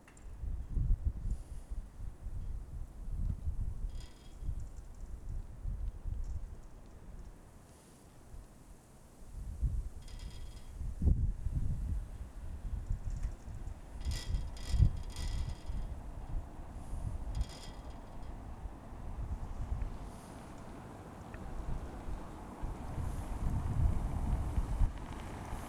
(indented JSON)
{
  "title": "Berlin: Vermessungspunkt Friedel- / Pflügerstraße - Klangvermessung Kreuzkölln ::: 08.04.2011 ::: 04:16",
  "date": "2011-04-08 04:16:00",
  "latitude": "52.49",
  "longitude": "13.43",
  "altitude": "40",
  "timezone": "Europe/Berlin"
}